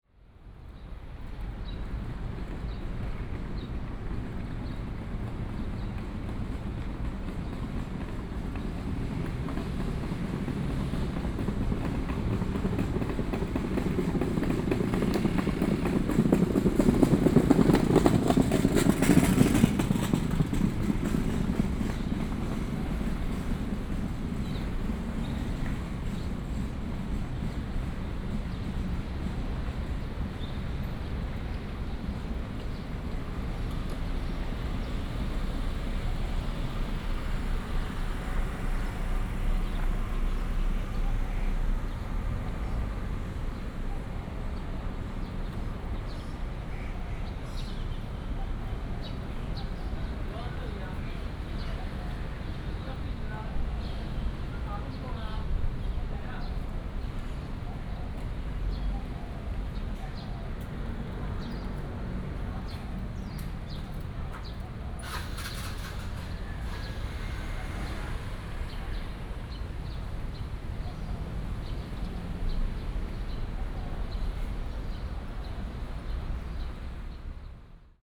Lane, Sec., Zhongxiao E. Rd., Da'an Dist. - Walking on the road
Walking on the road, Hot weather, Bird calls, Construction noise